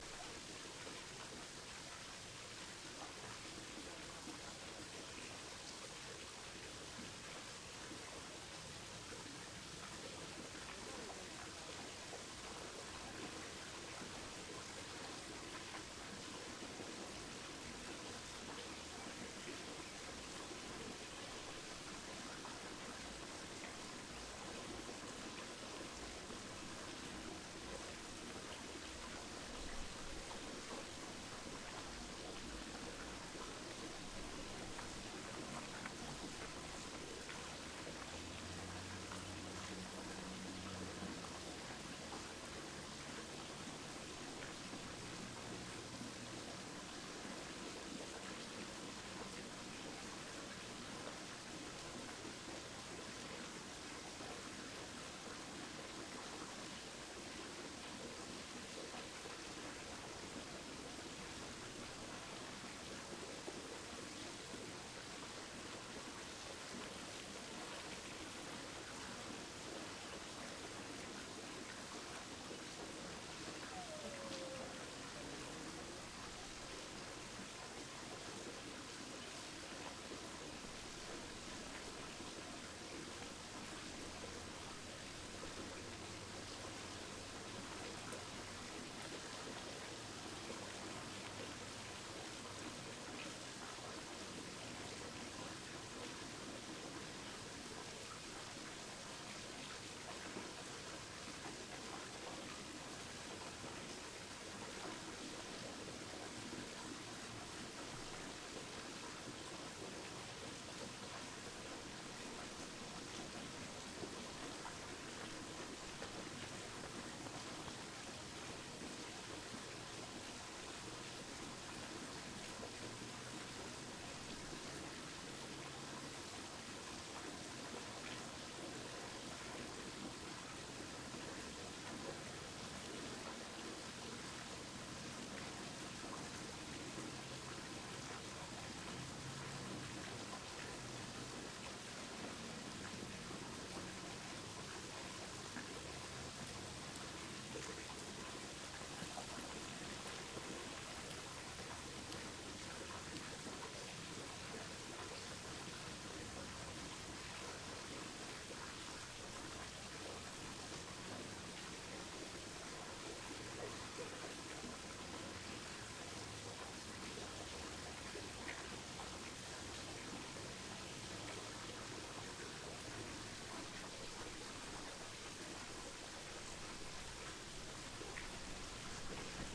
Deep Lock Quarry - Lock 28
Recorded at Deep Lock Quarry, specifically Lock 28, the deepest lock in the Ohio Erie Canal. This part of the canal now rarely fills, but some water does flow in, which you will hear throughout the recording. There is also a historic quarry on the site. Millstones from the historic Shumacher Mill, the predecessor of Quaker Oats, can be found onsite, along with other interesting quarry castoffs and remnants of the quarry itself. Reputed to be haunted, this place is heavily forested and has always struck me as melancholy. It is an important nesting site for songbirds, including the vulnerable cerulean warbler. The Lock is located in Cuyahoga National Park and can be accessed via the Deep Lock Quarry parking lot or via the Ohio Erie Canal Towpath Trail. You can hear the sound of bikers and walkers on the trail.